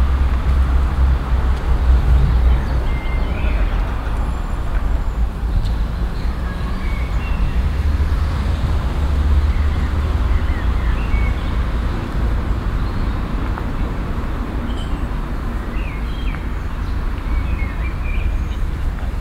vordere wegabzweigung zum restaurant stereofeldaufnahmen im mai 08 - nachmittags
project: klang raum garten/ sound in public spaces - in & outdoor nearfield recordings
stadtgarten, weg zum restaurant